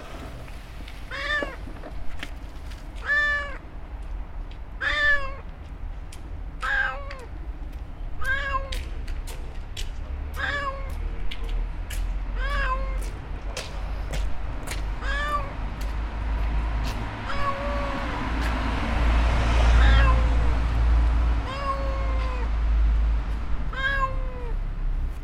Sobbing of the cat in Vinohrady
Lužická street is one of the most beautiful streets of Vinohrady, if not in whole Prague. In both sides it is line with huge trees full of birds. Today was a bit like in the beginning of the spring. When I was walking down the street Ive heard urgent sobbing of a cat. Finally I found the cat in small opening under the entrance. Somebody from the house has said to me, that he knows the cat. But I have to go there tomorrow again. It seems, that the cat can get out from the cellar.
...the other day the cat was gone * so it is safe